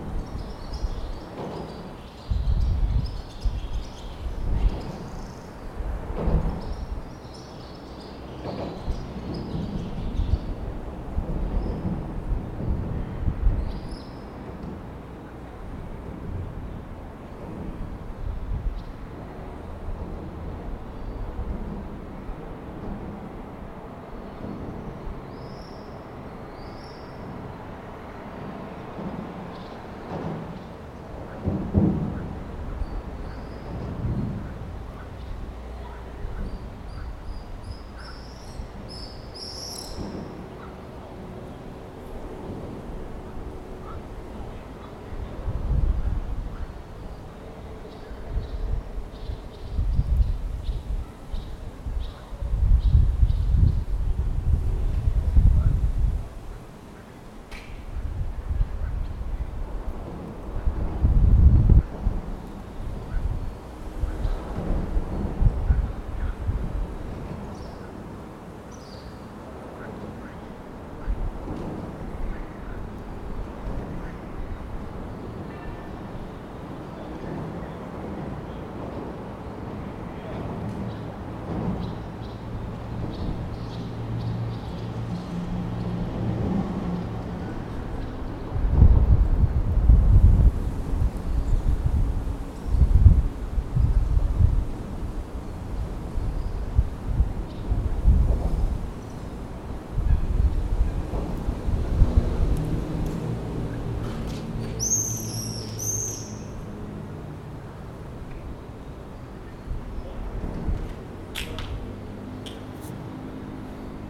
вулиця Рєпіна, Вінниця, Вінницька область, Україна - Alley12,7sound6soundunderthebridge
Ukraine / Vinnytsia / project Alley 12,7 / sound #6 / sound under the bridge